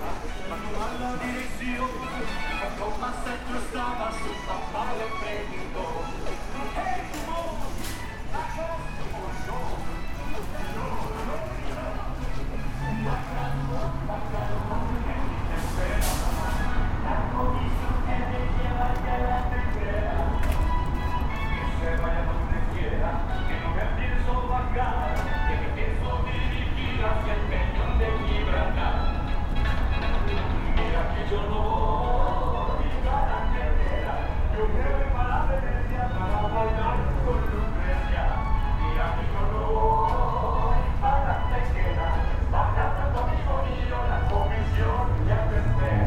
C. Felipe B. Martínez Chapa, Hispano, León, Gto., Mexico - Caminando dentro de The Home Depot, León Guanajuato, por todas las secciones de la tienda.
Walking inside The Home Depot, Leon Guanajuato, by all the sections of the store.
I made this recording on September 13th, 2021, at 1:33 p.m.
I used a Tascam DR-05X with its built-in microphones and a Tascam WS-11 windshield.
Original Recording:
Type: Stereo
Caminando dentro de The Home Depot, León Guanajuato, por todas las secciones de la tienda.
Esta grabación la hice el 13 de septiembre de 2021 a las 13:33 horas.
Usé un Tascam DR-05X con sus micrófonos incorporados y un parabrisas Tascam WS-11.
Guanajuato, México, 13 September 2021